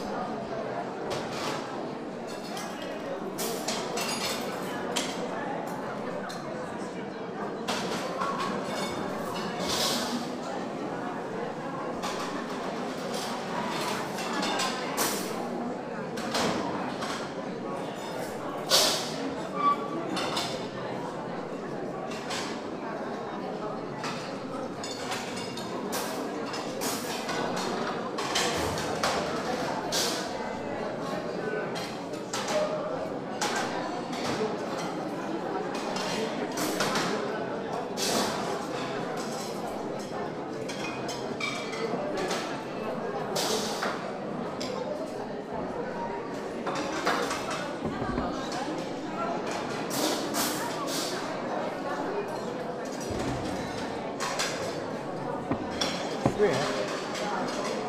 Połczyn-Zdrój, Polen - dining hall at "Gryf"
dinner is served in the dining hall at the sanatorium "Gryf", the din of the pottery, dishes, people echoe beautifully on the stone floor. "h2"recorder.